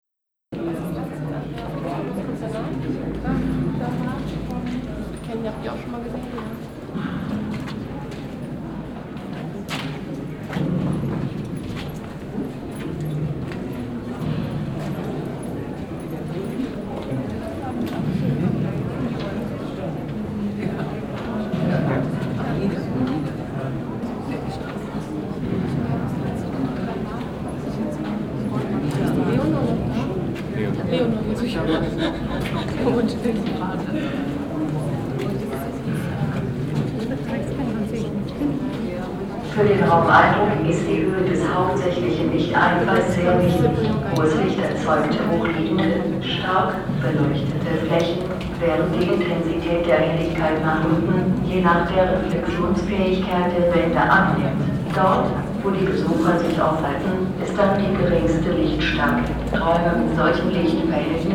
Inside the cellar of the Abraham building at the museums island hombroich. The sound of video installations under the title. Abandoned City presented by the Julia Stoschek collection here during the Quadrifinale plus voices of the visitors.
soundmap d - social ambiences, topographic field recordings and art spaces
Neuss, Germany, 9 August, 16:50